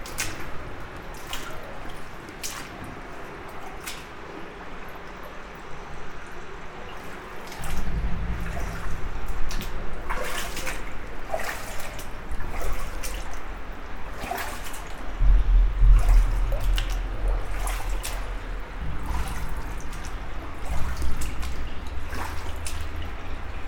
Nivelles, Belgium - Walking in the sewers
Walking in the Nivelles sewers. Its very very dirty and theres rats everywhere. Im worried about this, it could be dangerous. Thats why Im walking slowly.